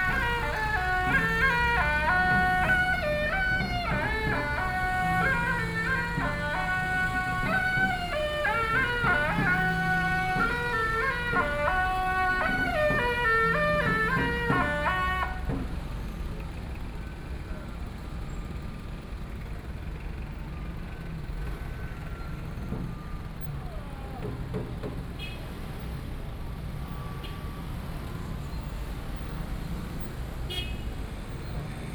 Guanghua Rd., New Taipei City - Traditional temple festivals
At the junction, Traditional temple Carnival, Garbage trucks will be arriving music, Traffic Noise, Binaural recordings, Sony PCM D50 + Soundman OKM II